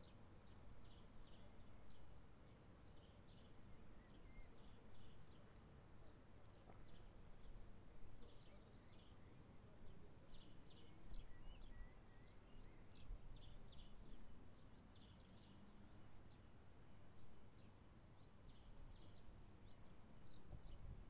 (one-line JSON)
{"title": "Krems an der Donau, Österreich - between Stadtpark & the city", "date": "2013-05-02 10:20:00", "description": "the sounds of birds from the Stadtpark mix beautifully with the machine- & manmade sounds of a busy day in Krems", "latitude": "48.41", "longitude": "15.60", "altitude": "201", "timezone": "Europe/Vienna"}